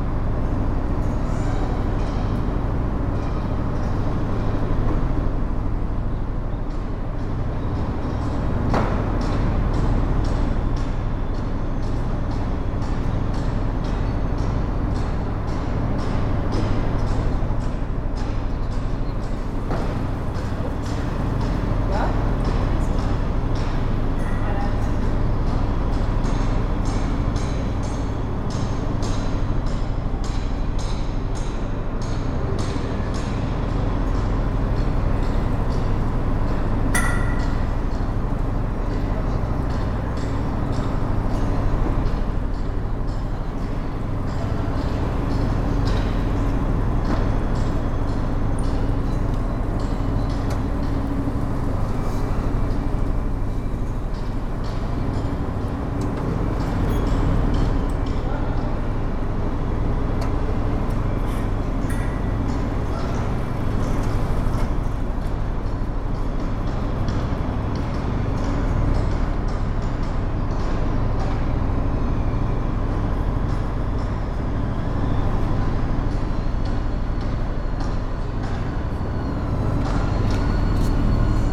Kent Station Cork, Lower Glanmire Rd, Montenotte, Cork, Ireland - Dublin Train, Departing
11.25am train to Dublin Heuston sitting on platform and then departing. Noise of local construction work throughout. Tascam DR-05.
6 February 2019, 11:19